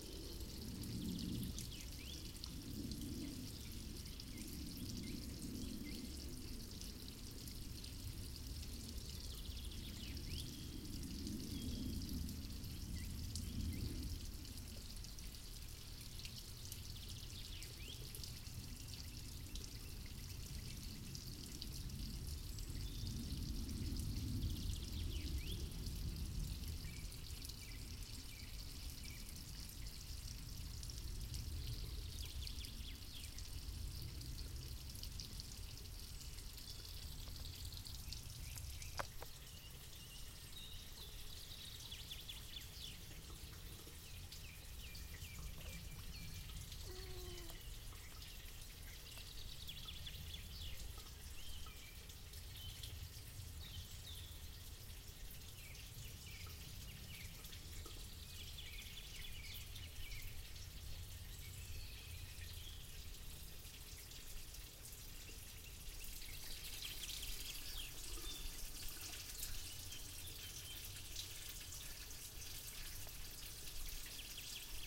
artificial pond, gutter
recorded june 1, 2008 - project: "hasenbrot - a private sound diary"